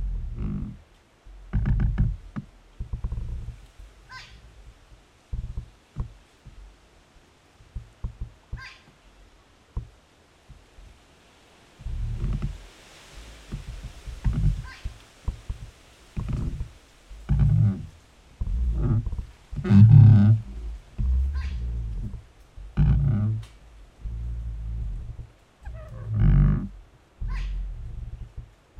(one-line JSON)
{"title": "Royal National Park, NSW, Australia - Two trees rubbing against each other on the coast", "date": "2014-09-29 09:00:00", "description": "Recorded at the edge of the littoral rainforest, not far from Burning Palms beach.\nRecorded with an AT BP4025 and two JrF contact microphones (c-series) into a Tascam DR-680.", "latitude": "-34.19", "longitude": "151.04", "altitude": "72", "timezone": "Australia/Sydney"}